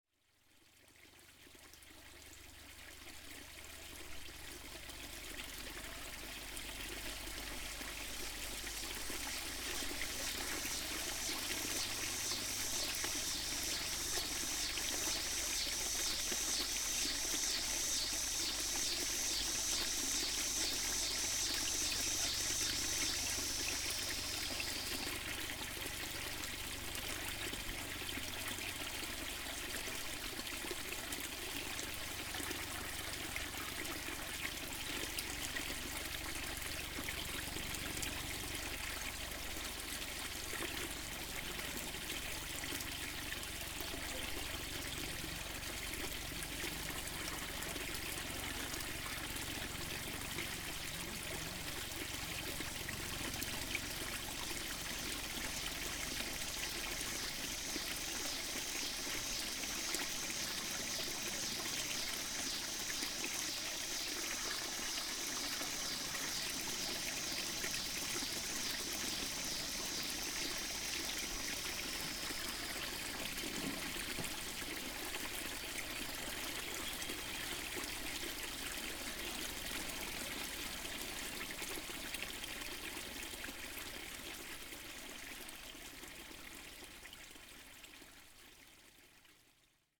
Flowing Well on Trails End, Potholes Wildlife Refuge Area, Marion, IN, USA - Flowing Well at Trails End, Miami State Recreation Area
Sounds heard at the flowing Well at Trail's End, Miami State Recreation Area. The spring, fisherman talking, a speedboat, and cicadas. Recorded using a Zoom H1n recorder. Part of an Indiana Arts in the Parks Soundscape workshop sponsored by the Indiana Arts Commission and the Indiana Department of Natural Resources.
Indiana, United States of America